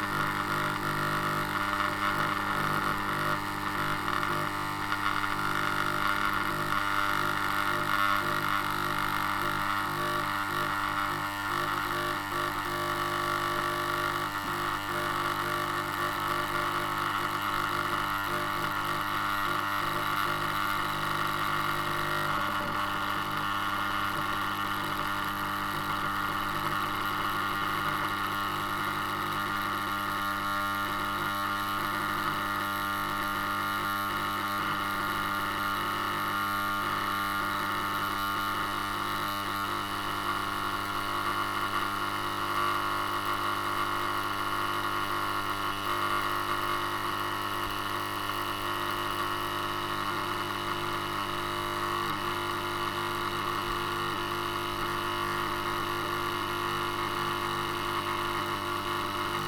Poznan, Piatkowo district, Mateckiego street - door bell
when the door bell switch is pressed but not released, the door bell makes a wild buzz
Poznań, Poland, 6 October 2012, 16:32